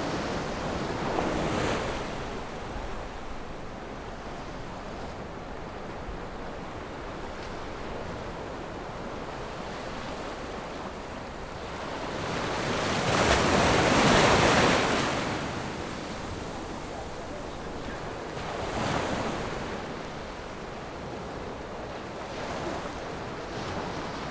橋仔漁村, Beigan Township - the waves
Sound wave, Small fishing port
Zoom H6 +Rode NT4